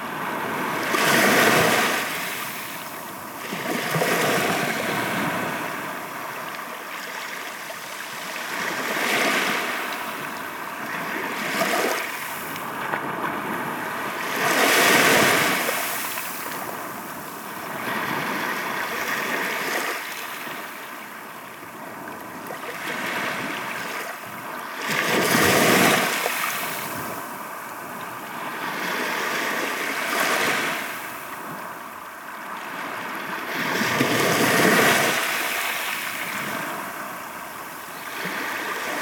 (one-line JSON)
{"title": "Sea, pebble beach, White Sea, Russia - Sea, pebble beach.", "date": "2014-06-10 13:40:00", "description": "Sea, pebble beach.\nШум моря, пляж мелкая галька.", "latitude": "65.13", "longitude": "40.03", "altitude": "15", "timezone": "Europe/Moscow"}